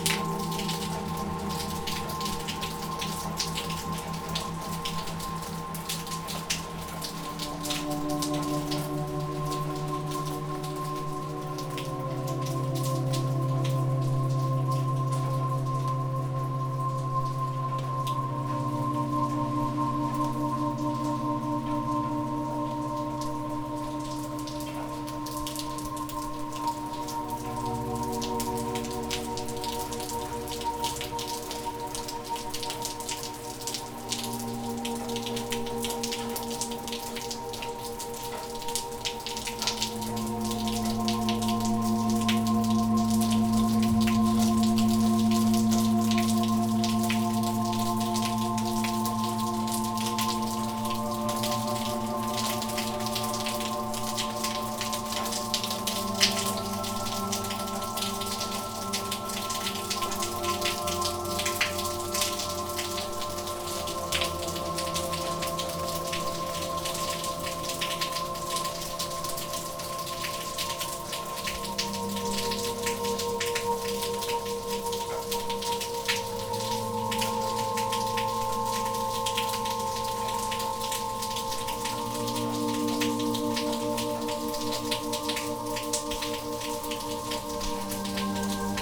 neoscenes: Dont Look Gallery in the rain
Random Acts of Elevator Music performing in the rain